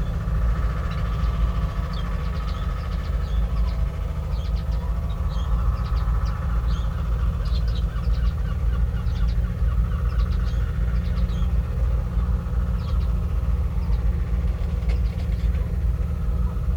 {"title": "Gedgrave Rd, Woodbridge, UK - barn swallow nest ...", "date": "2000-08-27 12:30:00", "description": "barn swallow nest ... open mic ... recorded in the vestibule of the volunteers hut called Tammy Noddy ... something to do with a Scottish moth ..? nest was over the water butt ... cassette to open reel to sdhc card ... bird calls from ... redshank ... linnet ... curlew ... common tern ... sandwich tern ... any amount of background noise ...", "latitude": "52.07", "longitude": "1.52", "altitude": "1", "timezone": "Europe/London"}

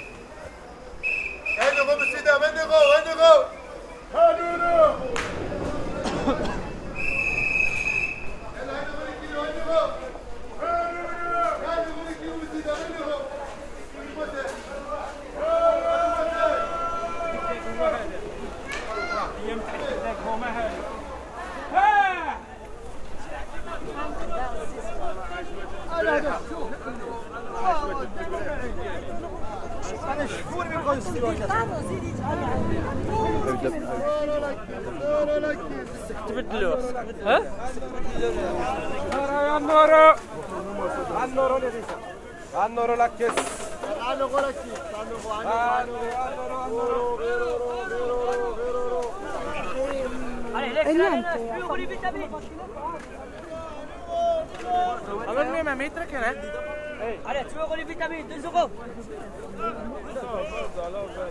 {"title": "Marché du Midi, Bruxelles /Brussels Market", "date": "2011-10-01 10:19:00", "description": "Sellers in Marché du Midi, trying to attract customers.Gare du Midi, Brussels, Euro Euro Euro", "latitude": "50.84", "longitude": "4.34", "altitude": "23", "timezone": "Europe/Brussels"}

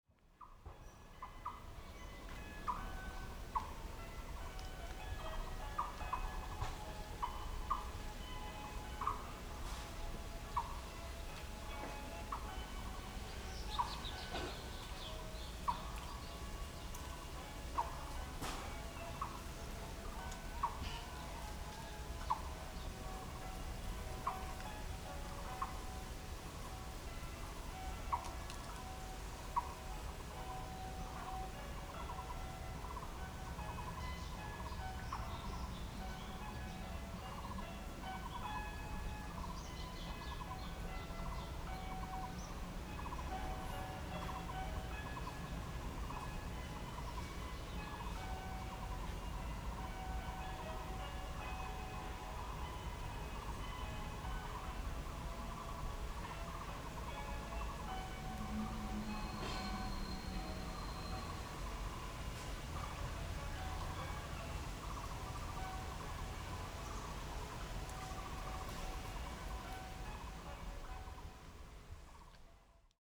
{"title": "Nangang District, Taipei City - Afternoon", "date": "2012-03-06 13:39:00", "description": "Mountain next to the natural sounds and the sound of a small temple, Rode NT4+Zoom H4n", "latitude": "25.04", "longitude": "121.59", "altitude": "39", "timezone": "Asia/Taipei"}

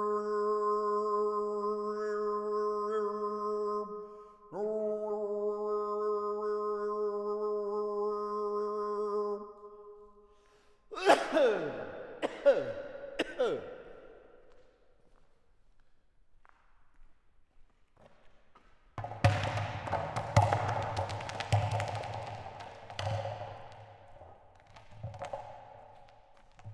{"title": "Place de lIndustrie, Amplepuis, France - Amplepuis Feyssel2", "date": "2017-11-17 15:29:00", "description": "Jeux acoustiques dans une usine desaffectée", "latitude": "45.97", "longitude": "4.33", "altitude": "412", "timezone": "Europe/Paris"}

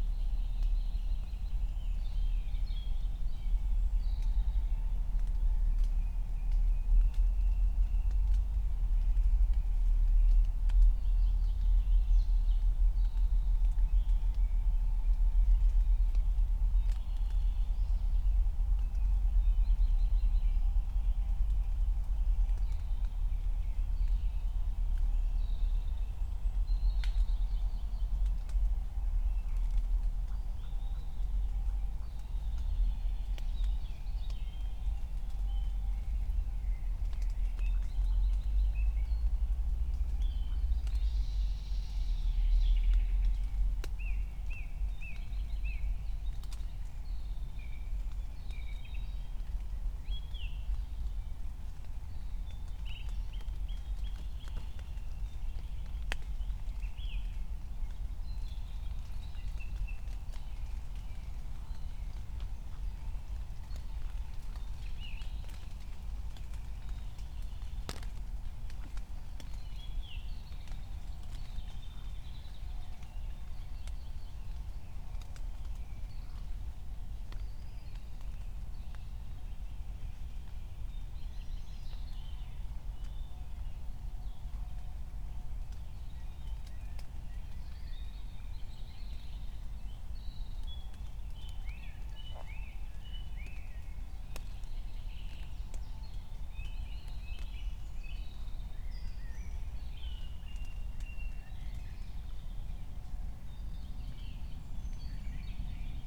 {"title": "Königsheide, Berlin - forest ambience at the pond", "date": "2020-05-23 04:00:00", "description": "4:00 a deep drone, raindrops, frogs, first birds", "latitude": "52.45", "longitude": "13.49", "altitude": "38", "timezone": "Europe/Berlin"}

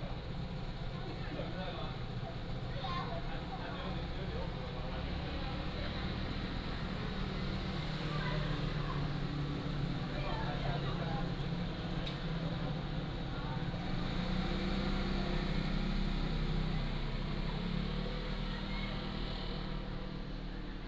{"title": "Lyudao Airport, Taiwan - Outside the airport", "date": "2014-10-30 12:41:00", "description": "Outside the airport", "latitude": "22.67", "longitude": "121.47", "altitude": "11", "timezone": "Asia/Taipei"}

